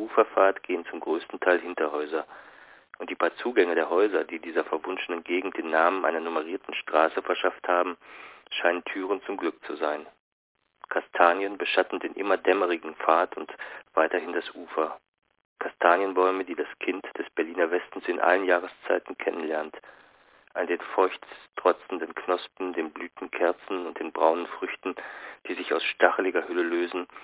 Der Landwehrkanal (3) - Der Landwehrkanal (1929) - Franz Hessel
Berlin, Germany